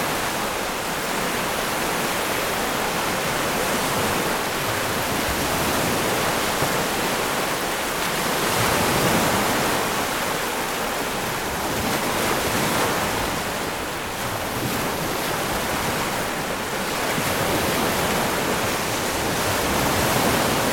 Recorded at the southernmost tip of mainland Canada. Because of currents in the area, waves approach from both sides, though moreso from the west (right).
Zoom H6 w/ MS stereo mic head.
Ontario, Canada, 21 May 2022, 13:34